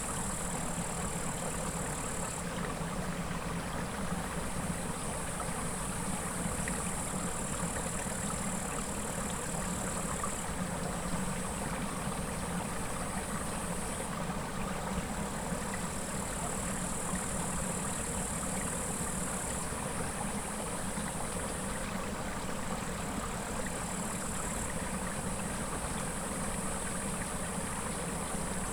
Utena, Lithuania, in the grass
in the summer's grass, near streamlet